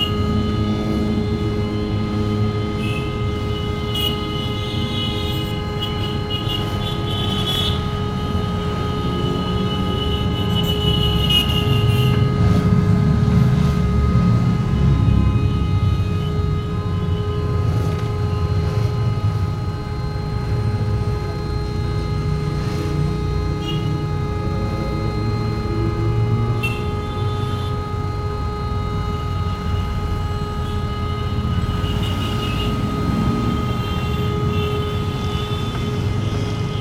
Rijeka, Croatia, Motors Noon - Motors Motors Noon Noon